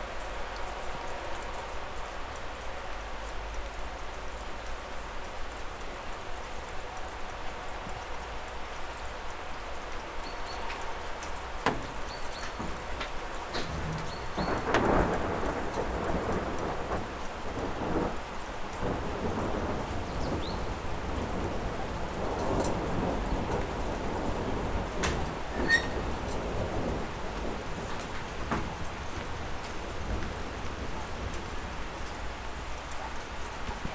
Jazminų gatvė, Ringaudai, Lithuania - Rain sounds from a balcony
A soundscape of my neighborhood during rain. Recorded from a balcony using ZOOM H5.
Kauno rajono savivaldybė, Kauno apskritis, Lietuva, 14 May 2021, 17:00